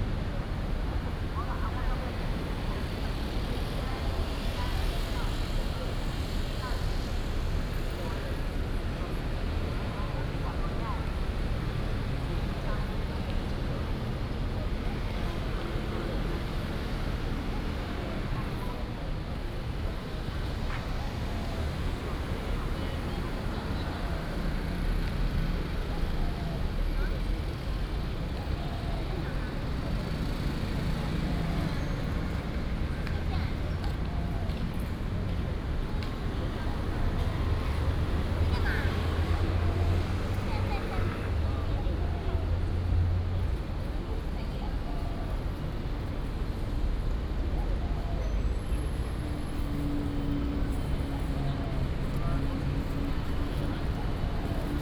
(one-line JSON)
{"title": "Luchuan E. St., Central Dist., Taichung City - Sitting in the square", "date": "2016-09-06 17:37:00", "description": "Sitting in the square, Traffic Sound", "latitude": "24.14", "longitude": "120.68", "altitude": "95", "timezone": "Asia/Taipei"}